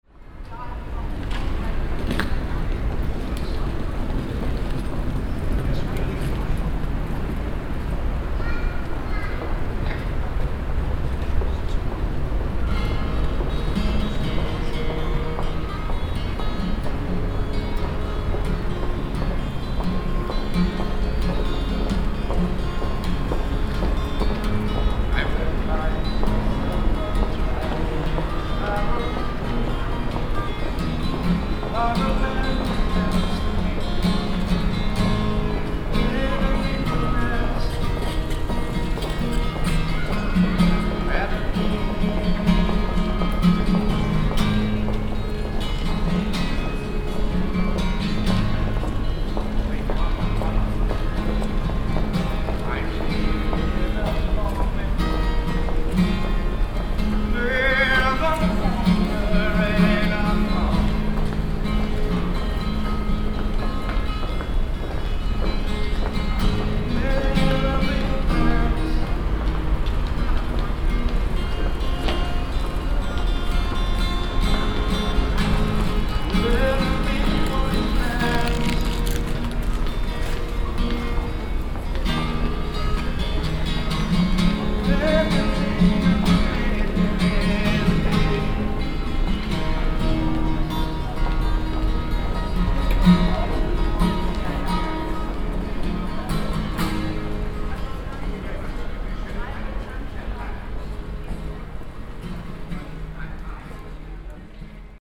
cologne, zeppelinstr, street musician
footsteps of passengers reflecting in the narrow passage. a street musician intonates a beatles song
soundmap nrw - social ambiences and recordings
Cologne, Germany